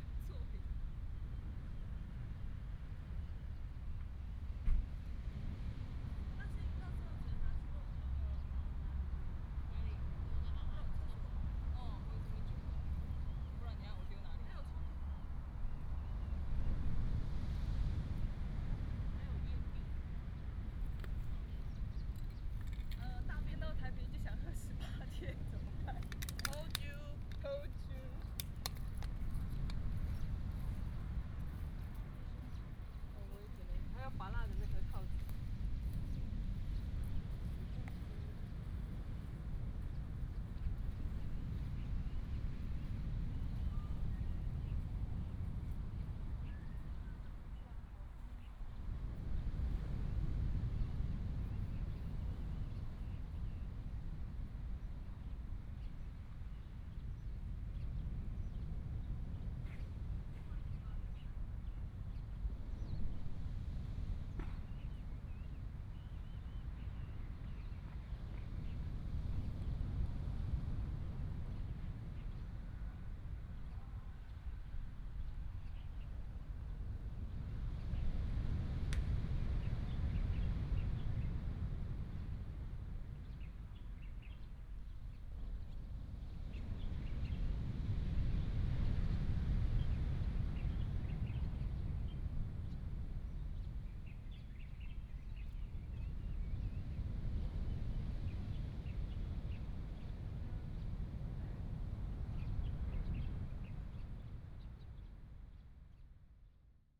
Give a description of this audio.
At the beach, Sound of the waves, Binaural recordings, Sony PCM D100+ Soundman OKM II